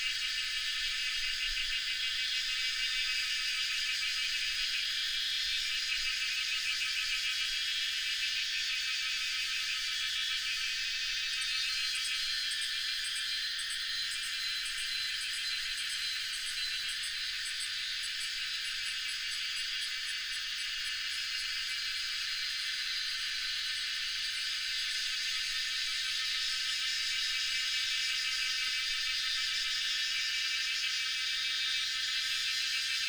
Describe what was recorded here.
Cicadas cry, Binaural recordings, Sony PCM D100+ Soundman OKM II